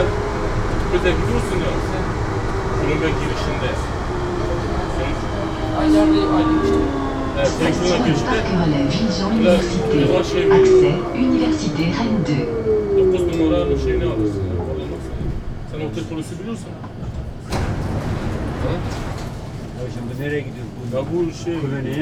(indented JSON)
{
  "title": "Université Rennes, Rue du Recteur Paul Henry, Rennes, France - Métro Villejean Université",
  "date": "2010-02-02 19:00:00",
  "latitude": "48.12",
  "longitude": "-1.70",
  "altitude": "47",
  "timezone": "Europe/Paris"
}